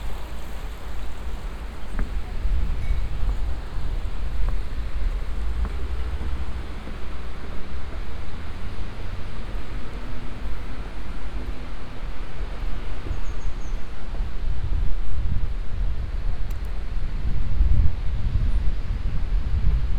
Cuenca, Cuenca, España - #SoundwalkingCuenca 2015-11-27 Crossing a wooden bridge over the Júcar river, Cuenca, Spain
Soundwalking - crossing a wooden bridge over the river Júcar, Cuenca, Spain.
Ludh binaural microphones -> Sony PCM-D100
27 November 2015